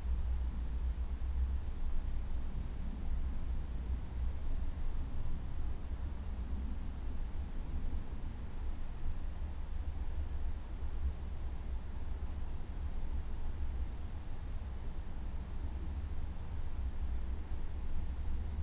Le[]rstelle - heimart göppingen le[]rstelle
Le[]rstelle - ein kunsttherapeutisches Projekt, welches sich als Rauminstallation mit dem Thema Stille auseinander setzt. Zu besuchen im Park des Klinikums Christophsbad in Göppingen....
heima®t - eine klangreise durch das stauferland, helfensteiner land und die region alb-donau
Göppingen, Germany, 4 November, ~15:00